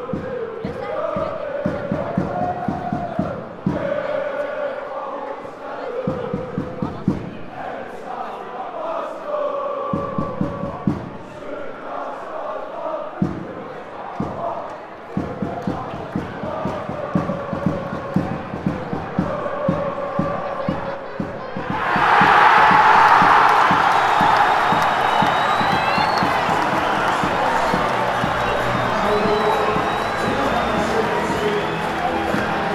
Randers NV, Randers, Danmark - Supporters chanting
At the end, the home team manage to score, and a loud roar comes from the home teams supporters. Enjoy
Randers NV, Denmark